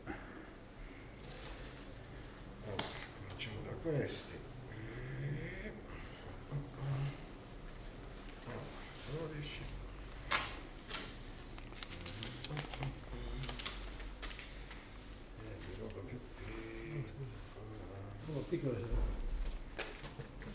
Ascolto il tuo cuore, città. I listen to your heart, city. Several chapters **SCROLL DOWN FOR ALL RECORDINGS** - “Posting postcard and market shopping at the time of covid19” Soundwalk
“Posting postcard and market shopping at the time of covid19” Soundwalk
Chapter LXX of Ascolto il tuo cuore, città. I listen to your heart, city.
Friday May 8th 2020. Walking to mailbox to post postcard and shopping in outdoor market Piazza Madama Cristina, fifty nine days (but fifth day of Phase 2) of emergency disposition due to the epidemic of COVID19.
Start at 1:45 p.m. end at 2:17 p.m. duration of recording 32’27”
The entire path is associated with a synchronized GPS track recorded in the (kml, gpx, kmz) files downloadable here: